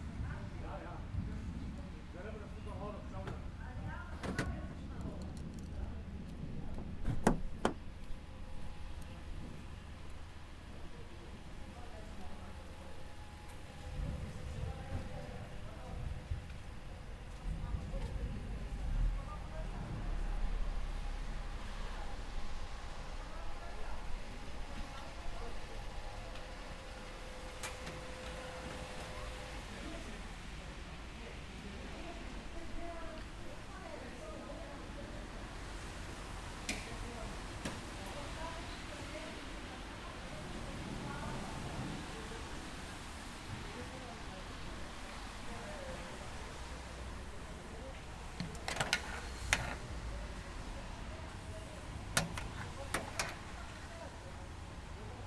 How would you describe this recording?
Gas station. Recorded with Zoom H4n